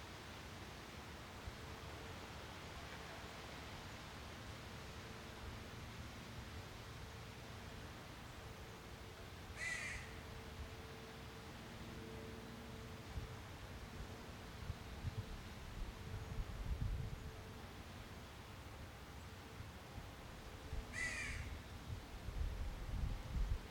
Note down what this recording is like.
At Picnic Point in north Edmonds, there's a pedestrian walkway to the beach over the tracks that run along the waterfront, but there's no at-grade vehicle crossing, so the trains don't have to slow down or even blow their whistles as they zoom past. This short, short freight train -- about a dozen cars -- can be heard blowing its horn a couple miles down the tracks at the nearest grade crossing, and then nothing -- until suddenly it bursts around the corner, wheels singing at full volume as the tracks curve sharply around the point. Just as suddenly the train has passed, and gently recedes into the distance.